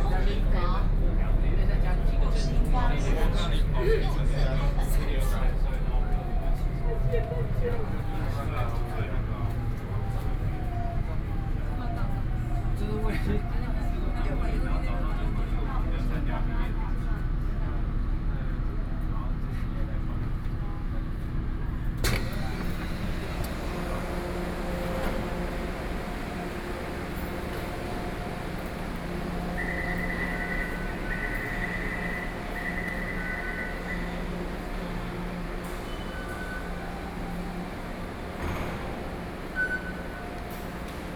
Tamsui-Xinyi Line, Taipei City - in the train
inside the MRT Train, Sony PCM D50 + Soundman OKM II
Taipei City, Taiwan